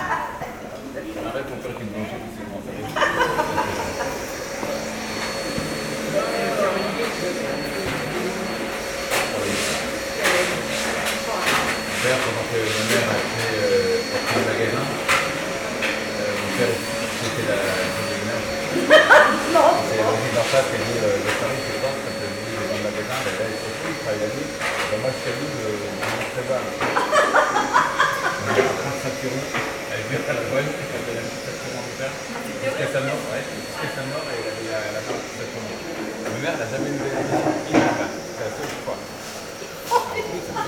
Tours, France - Noisy morning in the Cordeliers street
On a sunny sunday morning, bars and restaurants are slowly opening. Tenants clean the places. Peole are discussing with coffee, on a noisy atmosphere near the bakery. It's a lovely morning in the old city of Tours.
13 August 2017